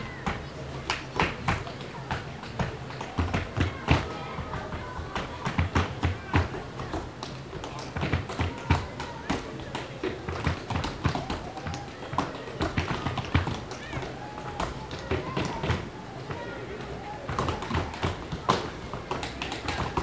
A group of tap dancers were practising in the rotunda. They stood in a circle and took turns to improvise, while a bubble man made huge bubbles nearby that children tried to burst.